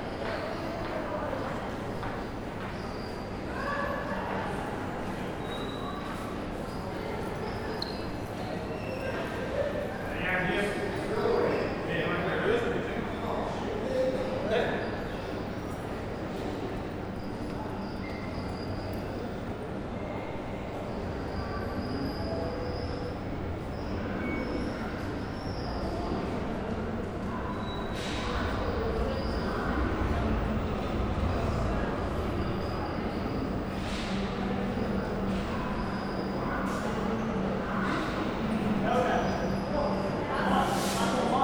neoscenes: near Flinders Track 1
Melbourne VIC, Australia, 11 June 2011